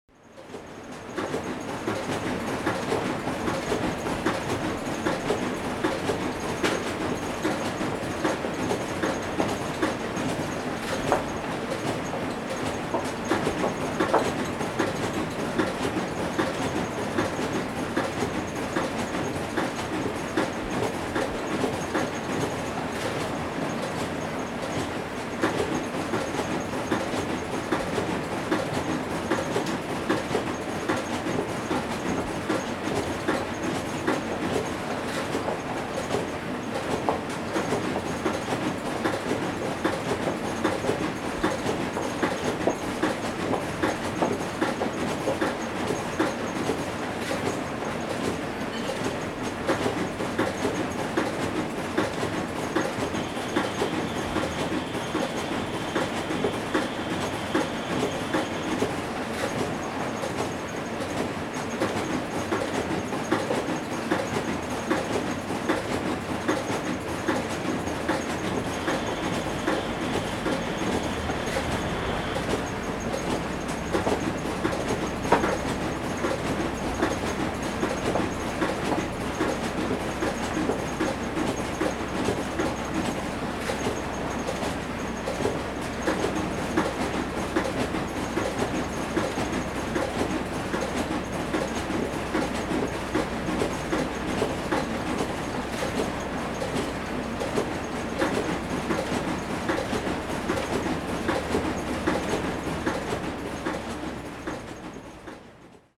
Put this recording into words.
Duplicator, Sony Hi-MD MZ-RH1+Sony ECM-MS907